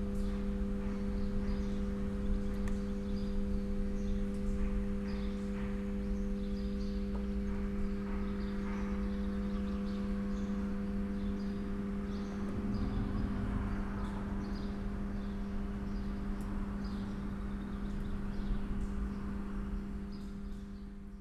Maribor, Nova vas, car park - electric buzz
electric buzz from a switch box
(SD702 DPA4060)
31 May, ~15:00